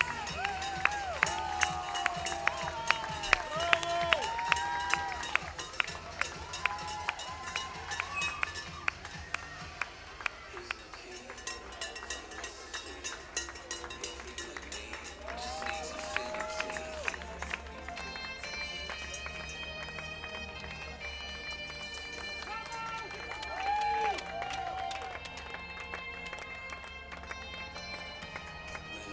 IronMan 2014 Finish line, Frankfurt, Germany - IronMan Frankfurt 2014 Marathon Finish line
IronMan Frankfurt 2014
Marathon Finish Line - Cheers, cowbells and celebrations during the athletes arrival
Zoom H6 with SGH-6 Mic with wind muffler.